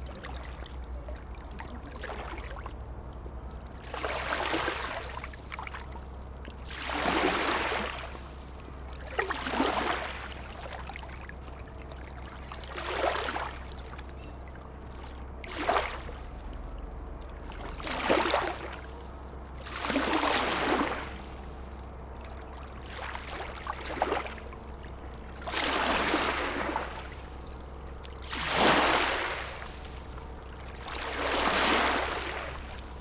Namur, Belgique - The barge
A barge is passing on the Meuse river. This makes a lot of waves.